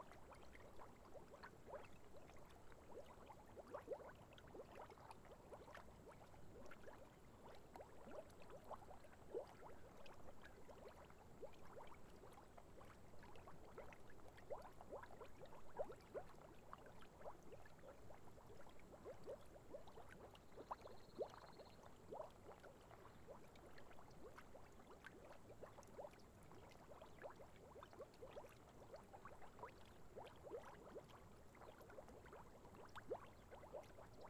Caldara di Manziana - Small mud pools
A little puddle with boiling mud. Some crickets and bird chirps in the distance, along with other animals calls fro the near wood.
The audio has been cropped to eliminate plane's noises from the near airport.
No other modifications has been done.
TASCAM DR100 MKII